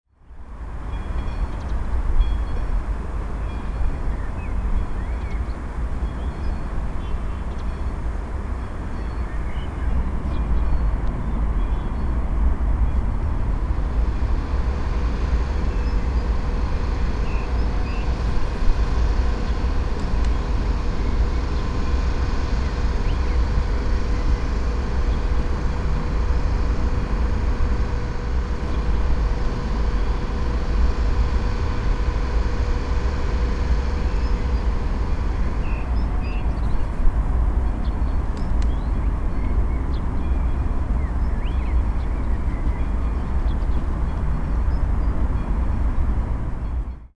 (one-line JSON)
{"title": "Station Praha - Bubny Zátory - Early Evening at the desolated station", "date": "2013-06-28 17:47:00", "description": "Station Praha - Bubny Zátory is the first stop on the Buštěhradská route from Masaryk Station. The tracks lead further to Stromovka park and Kladno and the junction to Holešovice port. the station offers a beautiful view towards the opposite Zizkov hill. The operation of the depot, workshops and shed had to lighten the depot at the Masaryk Station. Capacity of the station was up to 140 cars. In 2000 the operation of the Station was terminated and it will serve as a location for developers projects.", "latitude": "50.10", "longitude": "14.44", "altitude": "193", "timezone": "Europe/Prague"}